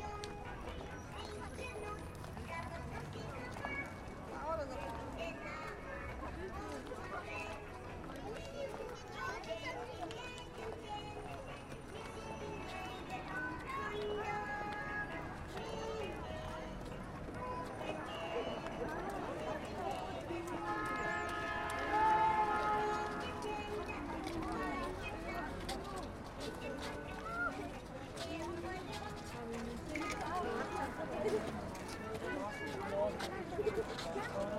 children's day in ub, everyone is walking to the amusement park